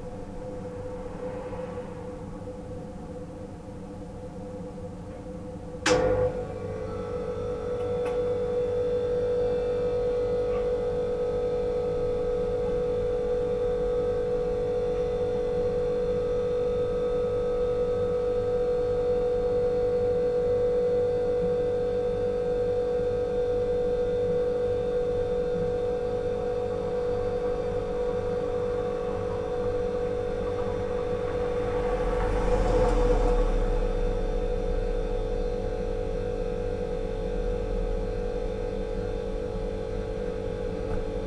Szymanowskiego, Lidl store - locked in a compartment
recorder was locked in a smal plastic trunk of a scooter, which was parked on a store parking lot nearby AC units. they turn off for a little while and you can hear the ambience of the parking lot. later in the recording the AC units kick back on. (roland r-07 internal mics)